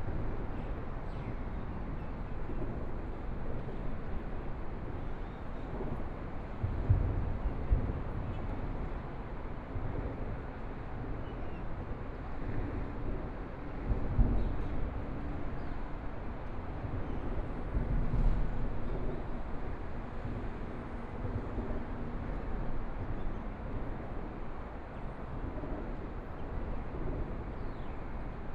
Standing beneath the freeway lanes, Sound from highway traffic, Traffic Sound, Sound from highway, Aircraft flying through, Birds singing, Binaural recordings, Zoom H4n+ Soundman OKM II
Zhongshan District, 中山高速公路